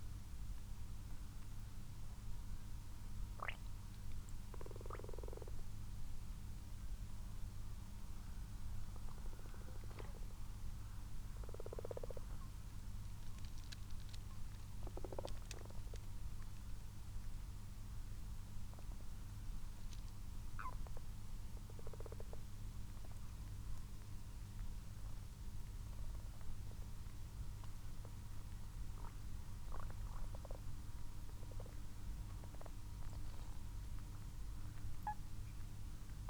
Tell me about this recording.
common frogs and common toads in a garden pond ... xlr sass on tripod to zoom h5 ... time edited extended unattended recording ...